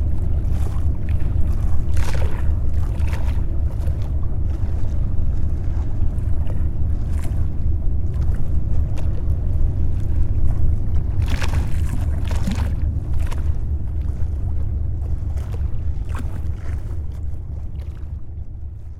Normandie, France - Enormous boat
An enormous gas supertanker is passing by on the Seine river, going to Rouen. It makes big waves with the boat track.